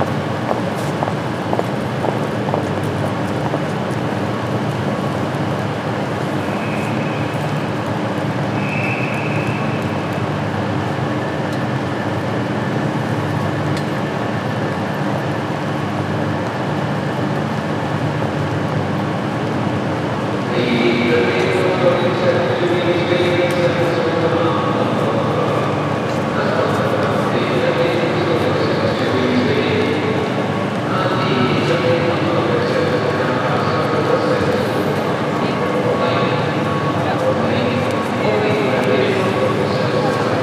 glasgow central station, rush hour, diesel train engine rumble
15 July 2010, ~08:00